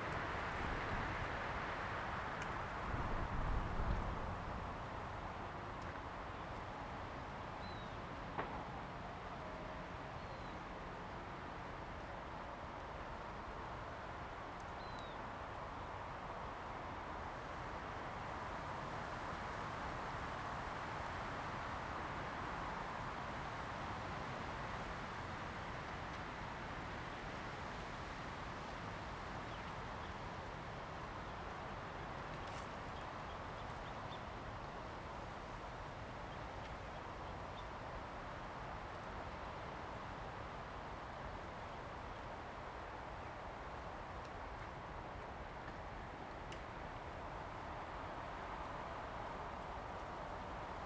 Jinning Township, Kinmen County - At the lake
Birds singing, Wind, In the woods
Zoom H2n MS+XY
金門縣 (Kinmen), 福建省, Mainland - Taiwan Border